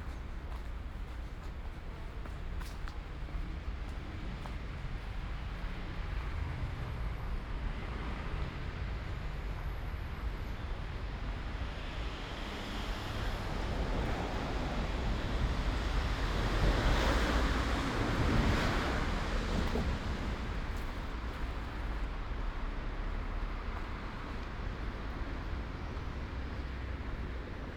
Ascolto il tuo cuore, città. I listen to your heart, city. Several chapters **SCROLL DOWN FOR ALL RECORDINGS** - Another morning far walk AR with break in the time of COVID19 Soundwalk
"Another morning far walk AR with break in the time of COVID19" Soundwalk
Chapter LXIX of Ascolto il tuo cuore, città. I listen to your heart, city
Thursday May 7th 2020. Walk to a borderline far destination: round trip. The two audio files are joined in a single file separated by a silence of 7 seconds.
first path: beginning at 7:40 a.m. end at 8:08 a.m., duration 28’14”
second path: beginning at 10:05 a.m. end al 10:41 a.m., duration 35’51”
Total duration of audio file: 01:04:13
As binaural recording is suggested headphones listening.
Both paths are associated with synchronized GPS track recorded in the (kmz, kml, gpx) files downloadable here:
first path:
second path:
Torino, Piemonte, Italia, 7 May